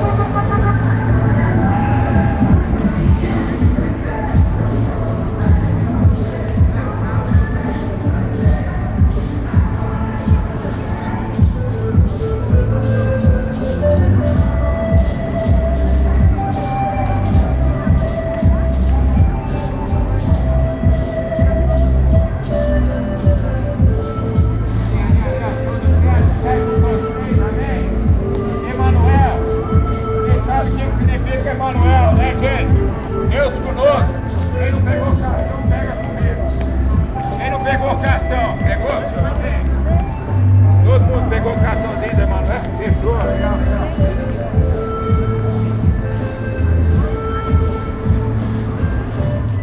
Andinos - Centro, Londrina - PR, Brasil - Calçadão: músico de rua (Andinos)
Panorama sonoro gravado no Calçadão de Londrina, Paraná.
Categoria de som predominante: antropofonia (música de rua).
Condições do tempo: ensolarado.
Data: 10/09/2016.
Hora de início: 10:48.
Equipamento: Tascam DR-05.
Classificação dos sons
Antropofonia:
Sons Humanos: Sons da Voz; Fala; Canto; Fala; Sons do Corpo; Palmas.
Sons da Sociedade: Músicas; Instrumentos Musicais; Banda e Orquestras; Festivais Religiosos.
Sons Mecânicos: Maquina de Combustão Interna; Automóveis; Motocicleta.
Sons Indicadores: Buzinas e Apitos; Trafegos;
Sound panorama recorded on the Boardwalk of Londrina, Paraná.
Predominant sound category: antropophony (street music).
Weather conditions: sunny.
Date: 10/09/2016.
Start time: 10:48.
Hardware: Tascam DR-05.
Classification of sounds
Human Sounds: Voice Sounds; Speaks; Corner; Speaks; Sounds of the Body; Palms
Sounds of the Society: Music; Musical instruments; Band and Orchestras; Religious Festivals.